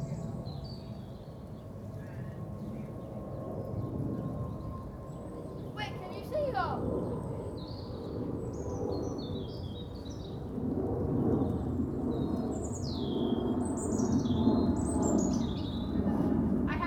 Dell Park, London - Dell Park playground
kids playing in the park